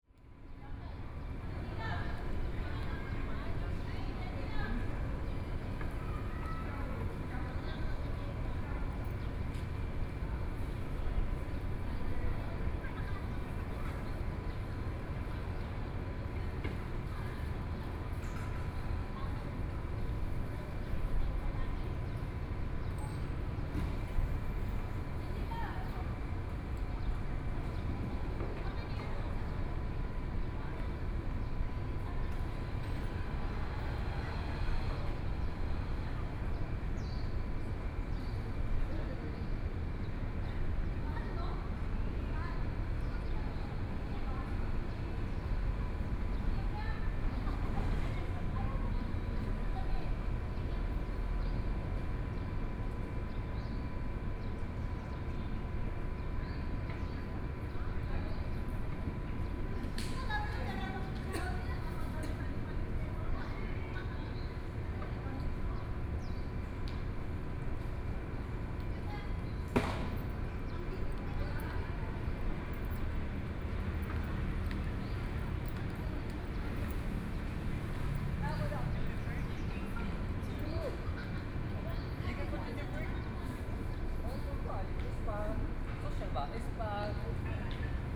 ShuangCheng Park, Taipei City - in the Park
in the Park, Environmental Noise, A group of foreign workers in the park to celebrate the birthday
Sony PCM D50+ Soundman OKM II
Zhongshan District, Taipei City, Taiwan, 27 April 2014